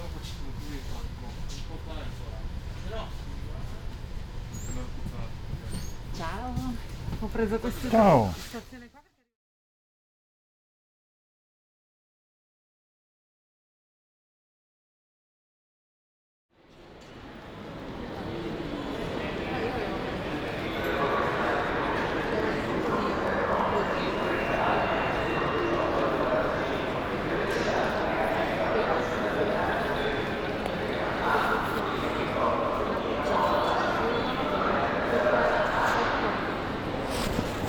"Two years after the first soundwalk in the time of COVID19": Soundwalk
Chapter CLXXXVIII of Ascolto il tuo cuore, città. I listen to your heart, city
Thursday, March 10th, 2022, exactly two years after Chapter I, first soundwalk, during the night of closure by the law of all the public places due to the epidemic of COVID19.
This path is part of a train round trip to Cuneo: I have recorded the walk from my home to Porta Nuova rail station and the start of the train; return is from inside Porta Nuova station back home.
Round trip is the two audio files are joined in a single file separated by a silence of 7 seconds.
first path: beginning at 6:58 a.m. end at 7:19 a.m., duration 20’33”
second path: beginning at 6:41 p.m. end al 6:54 p.m., duration 13’24”
Total duration of recording 34’04”
As binaural recording is suggested headphones listening.
Both paths are associated with synchronized GPS track recorded in the (kmz, kml, gpx) files downloadable here:
first path:
second path:
Piemonte, Italia, 10 March 2022, ~07:00